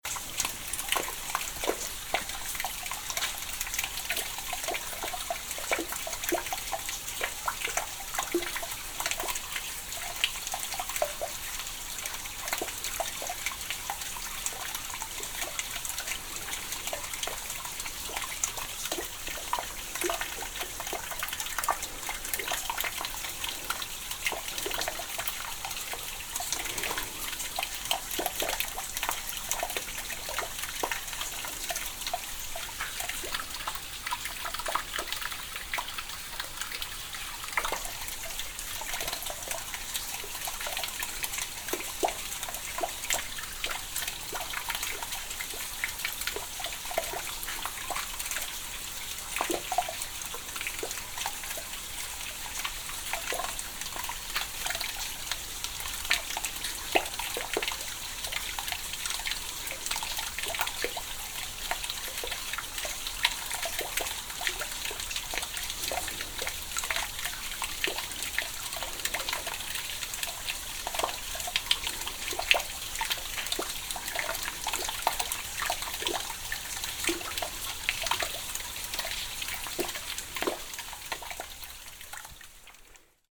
{
  "title": "alto, small water viaduct near the path",
  "date": "2009-07-26 19:11:00",
  "description": "small water leaded by an old viaduct system close to the path\nsoundmap international: social ambiences/ listen to the people in & outdoor topographic field recordings",
  "latitude": "44.11",
  "longitude": "8.00",
  "altitude": "650",
  "timezone": "Europe/Berlin"
}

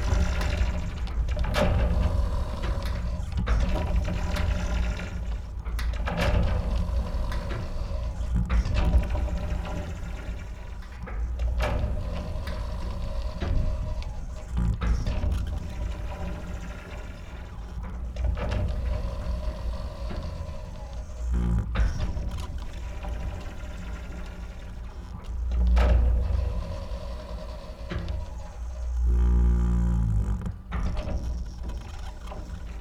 {
  "title": "Arivaca Desert (Arizona) - Windmill screaming in the desert",
  "date": "2021-08-16 18:00:00",
  "description": "A windmill in the desert of Arizona is screaming while the wind is coming. Those windmills are used to pump water, in order to give water to cattle or wild animals for hunting. The screaming is produced naturally by the central mast on a piece of wood (part of the windmill).\nRecorded during a scouting for an upcoming sound art project in Arizona (to be done in 2022).\nMany thanks to Barry, Mimi and Jay for their help.\nRecorded by a Sound Devices MixPre6\nWith a MS Schoeps Setup CCM41 + CCM8 in a Zephyx windscreen by Cinela, and a Geofon by LOM (for the metallic sounds)\nSound Ref: AZ210816T005\nRecorded on 16th of August 2021\nGPS: 31.625619, -111.325112",
  "latitude": "31.63",
  "longitude": "-111.33",
  "timezone": "Pacific/Honolulu"
}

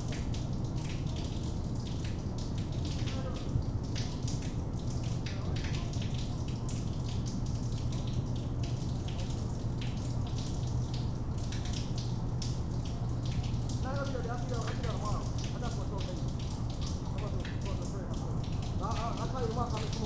{
  "title": "Suffex Green Ln NW, Atlanta, GA, USA - Storm Drain",
  "date": "2020-01-13 16:58:00",
  "description": "A storm drain in the neighborhood dripping after a rainstorm. A train comes by, cars pass the bend in the road where the drain is located, and a repair person for the apartment complex passes the recorder multiple times. Other people in the neighborhood also passed behind the recorder, which resulted in footsteps on the recording. Recorded with a Tascam Dr-100mkiii and a wind muff.",
  "latitude": "33.85",
  "longitude": "-84.48",
  "altitude": "287",
  "timezone": "America/New_York"
}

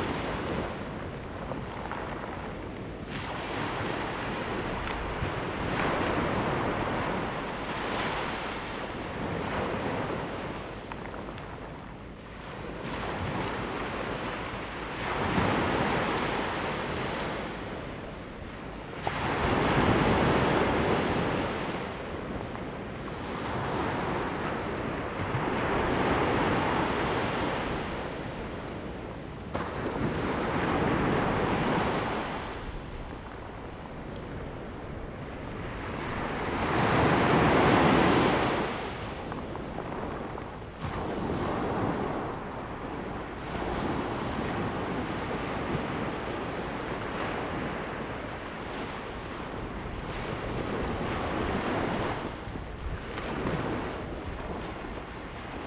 {
  "title": "Checheng Township, Pingtung County - at the seaside",
  "date": "2018-04-02 17:13:00",
  "description": "at the seaside, wind sound, Sound of the waves\nZoom H2n MS+XY",
  "latitude": "22.07",
  "longitude": "120.71",
  "altitude": "1",
  "timezone": "Asia/Taipei"
}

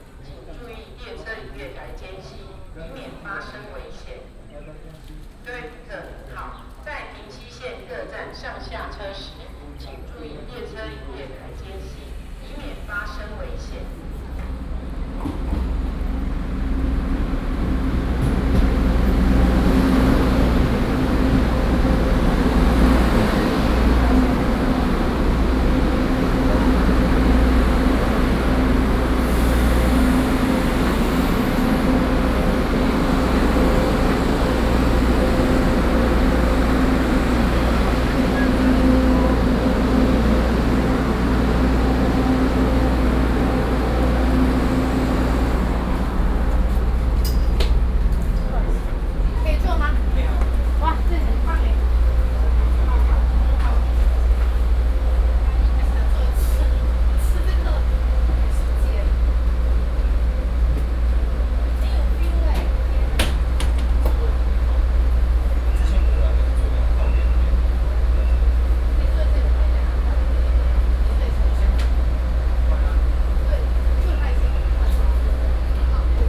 {
  "title": "Ruifang Station, New Taipei City, Taiwan - In the train station platform",
  "date": "2012-06-05 15:29:00",
  "description": "In the train station platform\nSony PCM D50+ Soundman OKM II",
  "latitude": "25.11",
  "longitude": "121.81",
  "altitude": "60",
  "timezone": "Asia/Taipei"
}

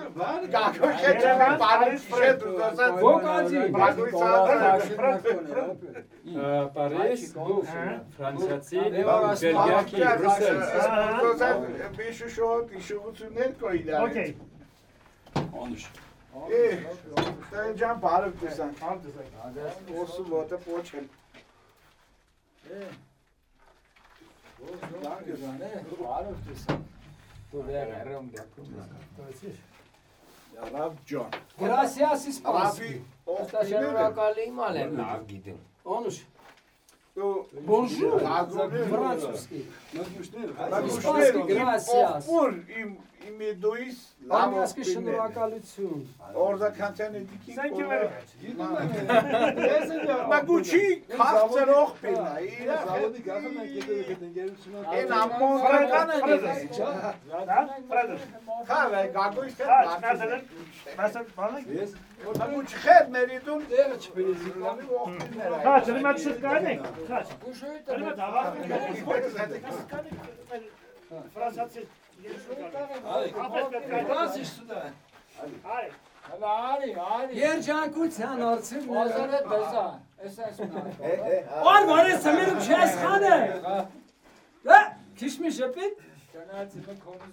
{
  "title": "Vank, Arménie - To the monastery",
  "date": "2018-09-04 16:40:00",
  "description": "After a terrible storm, some farmers took a car and went here, at the monastery. The old church is on the top of a volcano. They prayed during 10 minutes. Some other people are here. Nobody knows nobody, but everybody discuss. They opened a vodka bottle and give food each others.",
  "latitude": "40.39",
  "longitude": "45.03",
  "altitude": "2454",
  "timezone": "GMT+1"
}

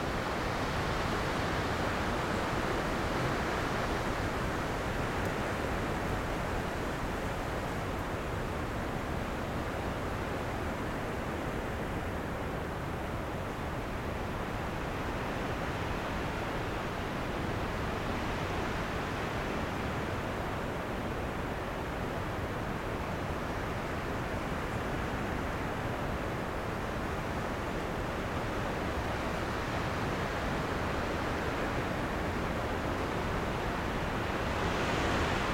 {
  "title": "Parque Nacional Alberto de Agostini, Magallanes y la Antártica Chilena, Chile - storm log - rockwell kent trail basecamp",
  "date": "2021-02-23 08:20:00",
  "description": "Rockwell Kent Trail basecamp, morning wind in forrest, wind SW 38 km/h, ZOOM F1, XYH-6 cap\nAlmost 100 years ago the artist and explorer Rockwell Kent crossed the Baldivia Chain between Seno Almirantazgo and the Beagle Channel via the Lapataia Valley. His documentation* of the landscape and climate is one of the first descriptions of this passage and serves as an important historic reference.\nThe intention of this research trip under the scientific direction of Alfredo Prieto was to highlight the significance of indigenous traces present in Tierra del Fuego, inter-ethnic traces which are bio-cultural routes of the past (stemming from the exchange of goods and genes). In particular, we explored potential indigenous cultural marks that Rockwell Kent described, traces that would connect the ancestors of the Yagán community with the Kawesqar and Selk’nam in the Almirantazgo Seno area.\n*Rockwell Kent, Voyaging, Southward from the Strait of Magellan, G.P.",
  "latitude": "-54.61",
  "longitude": "-69.06",
  "altitude": "358",
  "timezone": "America/Punta_Arenas"
}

